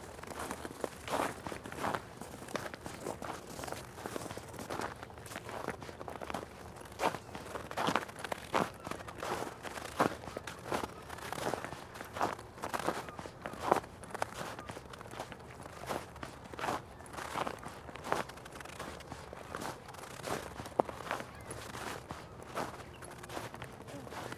Green Bay, WI, USA - Icy Fox River Trail
Sounds underfoot as we walk across melting ice and snow down the Fox River Trail. Lake Michigan gulls have come to the thawing river, looking for food. Recorded with the mighty and handy Sony PCM-D50 with built in mics.
Brown County, Wisconsin, United States of America, 17 March 2013, 16:50